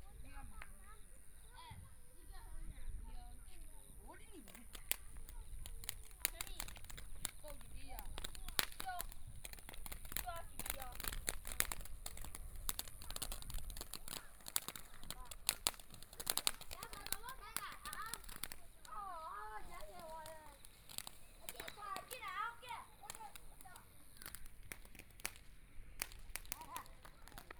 雲林縣水林鄉蕃薯村 - small Town
Walking in the small streets, Traffic Sound, Kids playing firecrackers, Firecrackers sound, Motorcycle Sound, Binaural recordings, Zoom H4n+ Soundman OKM II